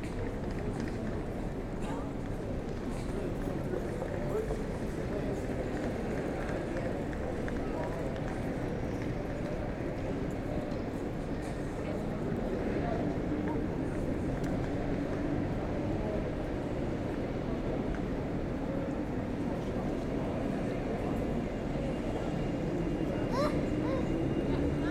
Willy-Brandt-Platz, Erfurt, Deutschland - Erfurt Main Station Forecourt 1
*Recording in AB Stereophony.
Day`s activity evolving: Whispers, trolley wheels on paved floor, footsteps, people, scattered conversations, speeding bus and tram engines and wheels, aircraft flyover at low range and subtle birds. All envents happening like structures in acousmatic music compositions.
The space is wide and feels wide. It is the main arrival and transit point in Thuringia`s capital city of Erfurt. Outdoor cafes can be found here.
Recording and monitoring gear: Zoom F4 Field Recorder, RODE M5 MP, Beyerdynamic DT 770 PRO/ DT 1990 PRO.
16 July, ~09:00